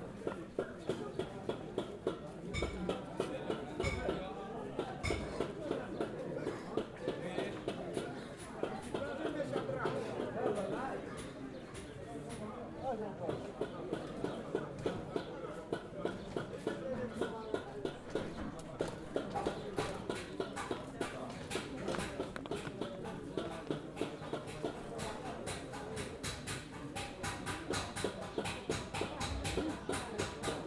Rue seffarine, Fès, Marokko - Coppersmiths
Stereo Recording of the "place seffarine" wich has been the production side of coppersmiths for hundreds of years and they are still there today.